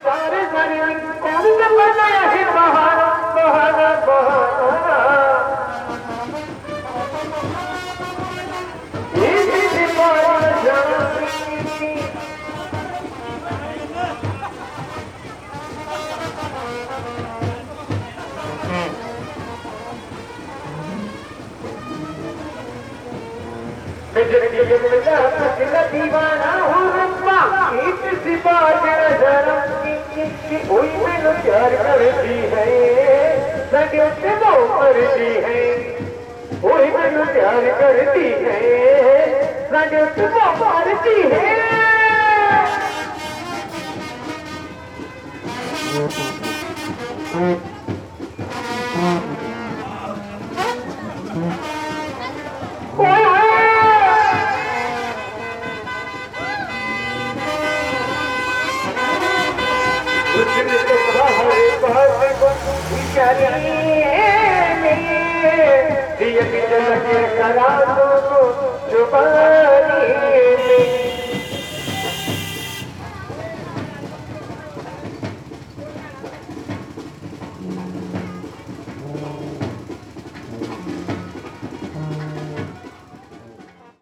Rishikesh, India, North Indian Wedding
late afternoon, from the Nepali Restaurant rooftop
Uttarakhand, India